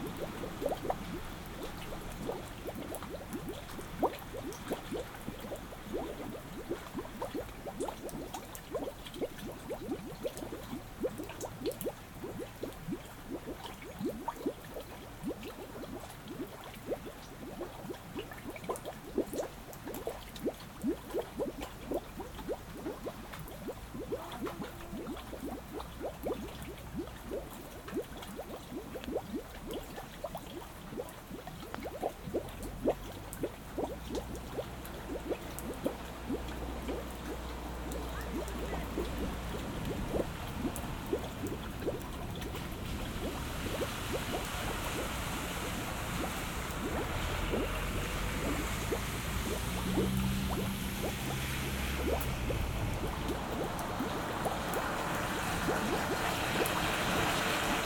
Utena, Lithuania, after the rain
Heavy rain is over. Some gurgling waters in the grass, probably rain-well...like previous recording, this is done with Sennheaiser ambeo headset
Utenos apskritis, Lietuva, 6 August 2022, 14:15